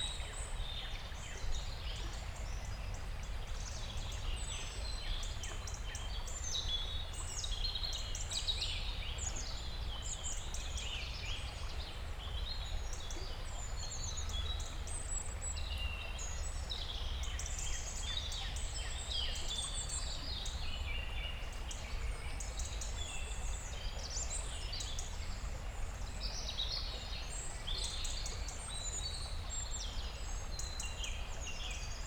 Thielenbruch, Köln, Deutschland - evening forest ambience
Thielenbruch forest, confluence of two creeks (Strunde, Umbach) evening ambience in early spring
(Sony PCM D50, DPA 4060)
Köln, Germany, 21 March 2019, 18:35